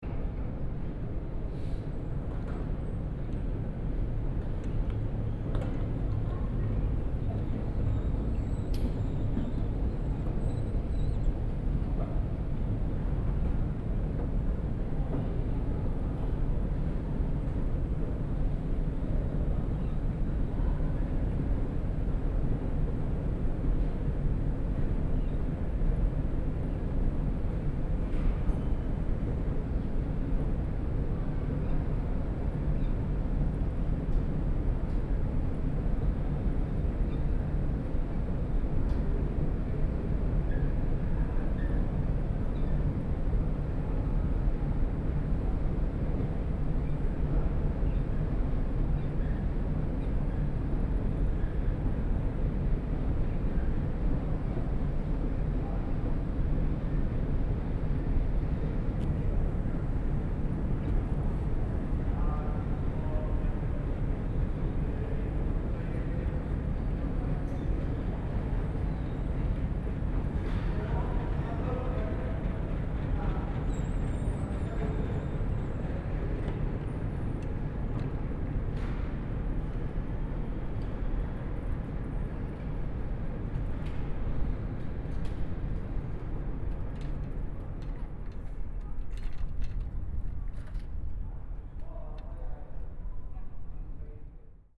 Maastunnel, Deelgemeente Centrum, Niederlande - moving upwards with old escalator
trip with escalator in the tunnel, leaving the tunnel with a bike. binaural recording with sound man okm klassik II.